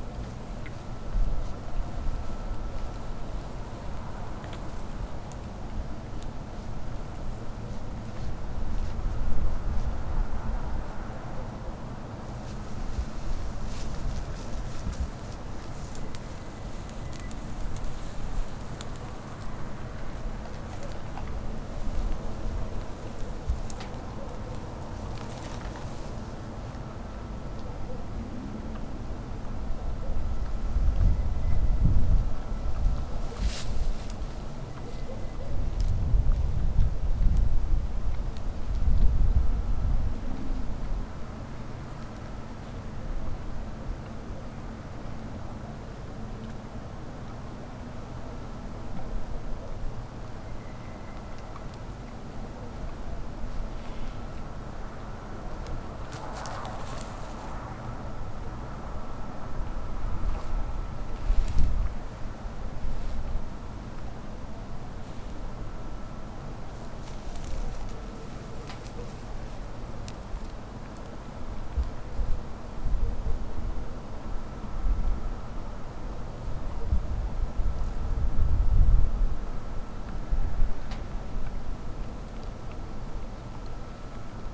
{"title": "Poznan, balcony - wild boars", "date": "2012-12-11 00:04:00", "description": "a few wild boars churning in the ground, treading dry bushes and slurping in the field in the middle of the night. also an unidentified, modulated, sine sound/whine.", "latitude": "52.46", "longitude": "16.90", "timezone": "Europe/Warsaw"}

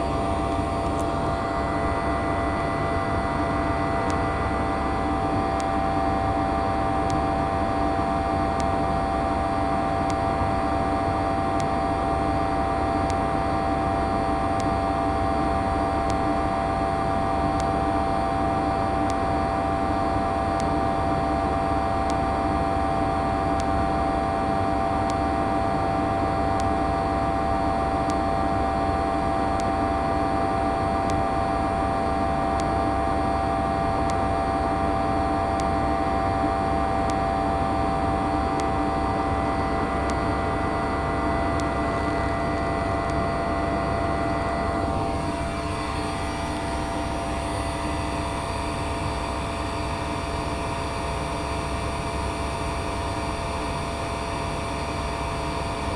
{"title": "Staverton Park, Woodbridge, Suffolk UK - water pump", "date": "2022-05-02 16:33:00", "description": "water pump house in Staverton Park\nMarantz PMD620", "latitude": "52.11", "longitude": "1.44", "altitude": "4", "timezone": "Europe/London"}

